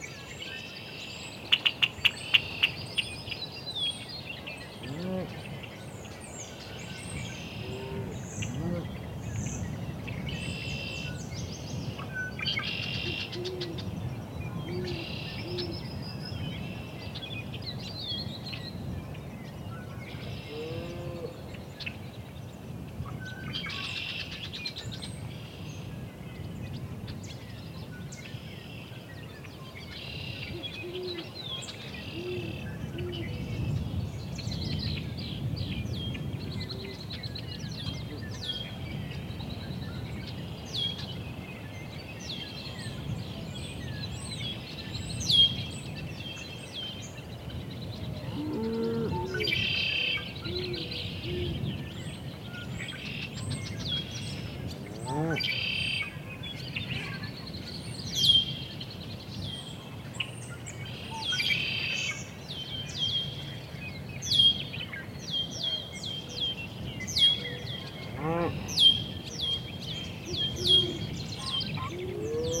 Nebraska, USA - Countryside in Nebraska, at the end of the day...
Birds singing, cows and bulls in a field in background. Recorded around a pound in the countryside of Nebraska (USA), at the end of the day. Sound recorded by a MS setup Schoeps CCM41+CCM8 Sound Devices 788T recorder with CL8 MS is encoded in STEREO Left-Right recorded in may 2013 in Nebraska, USA.